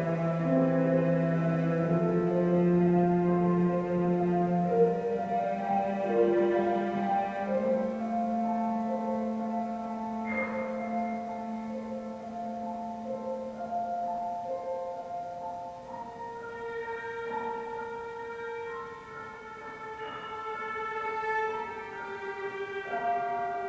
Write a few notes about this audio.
Anykščių Koplyčia-Kamerinių Menų Centras